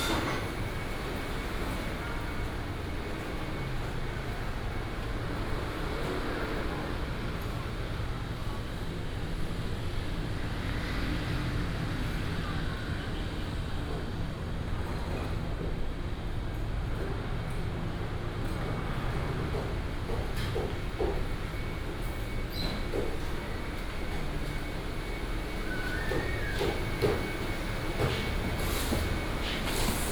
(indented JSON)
{
  "title": "Daya Rd., Daya Dist., Taichung City - walking in the traditional market",
  "date": "2017-09-19 12:18:00",
  "description": "traditional market, traffic sound, vendors peddling, Traditional market area, Binaural recordings, Sony PCM D100+ Soundman OKM II",
  "latitude": "24.22",
  "longitude": "120.65",
  "altitude": "143",
  "timezone": "Asia/Taipei"
}